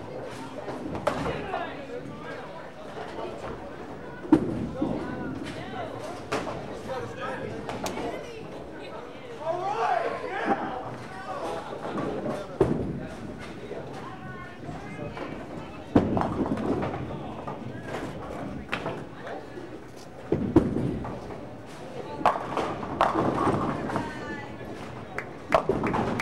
Lynnwood Bowl - Bowling Alley
Bowling truly is The Sport of Kings. Where else can you get such instant feedback and wild enthusiasm for every good shot? What other sport encourages participants to drink beer?
Major elements:
* Strikes, spares and the occasional gutterball
* Pin-setting machines
* Appreciative bowlers
* A cellphone
27 January 1999, 21:08